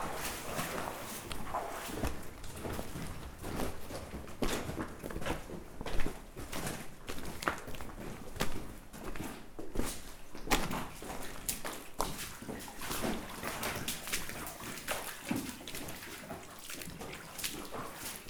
Angevillers, France - Rochonvillers mine
We are walking into the Rochonvillers underground mine, this is the main tunnel. We are crossing a place where the oxygen level is very poor. As this is dangerous, we are going fast. This is stressful. Recorded fastly while walking.
31 October, 09:30